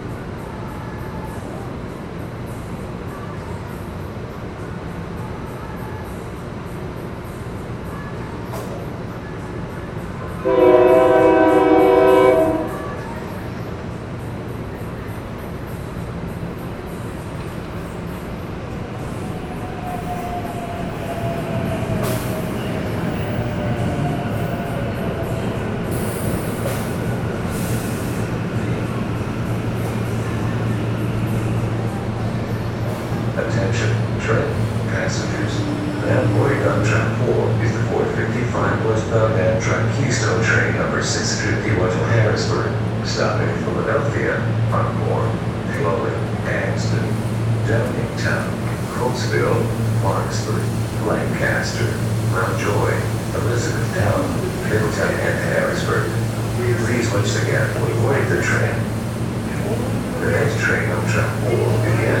This recording was taken in the heart of the Trenton Transit Center on a balmy Friday evening.